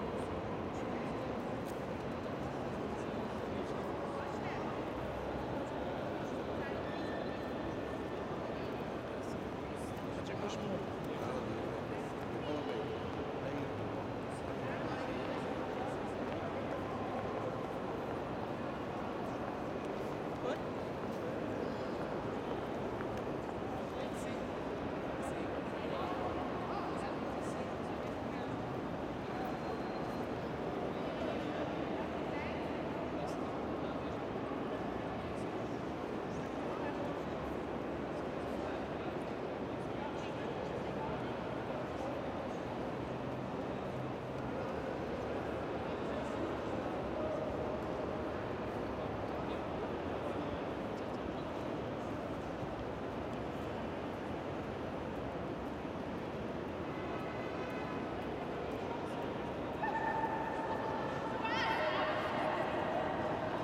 Glockenschlag. Am Schluss ein Männerchor.
Museumstrasse, Zürich, Switzerland, 15 June 1998